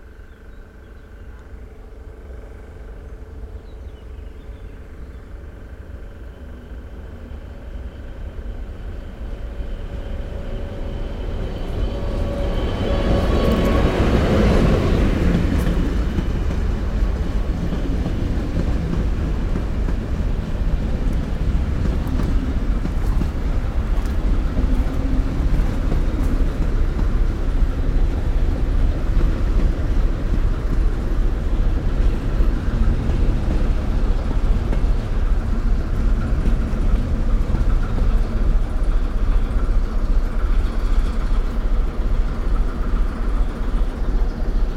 Railaway station Vršovice Praha 10 - Turntable Music 2
Praha-Vršovice railway station (Nádraží Praha-Vršovice) is a railway station located in Prague 4 at the edge of Vršovice and Nusle districts, The station is located on the main line from Praha hlavní nádraží to České Budějovice, and the local line to Dobříš and Čerčany via Vrané nad Vltavou. This is the area under the Bohdalec hill with locomotive depo and turntable.
Binaural recording
Prague-Prague, Czech Republic, July 2013